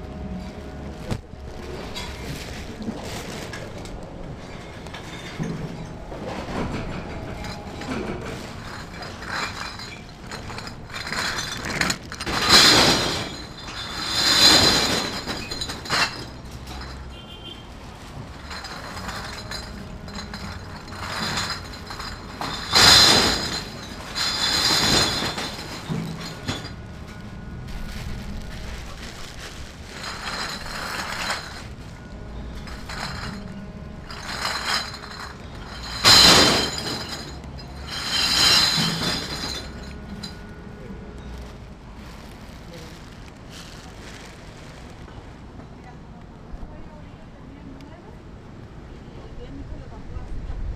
five months later, same place -- bottles return worth $17.01, unfortunate business but good noisy, industrial recording
27 March 2012, 11:30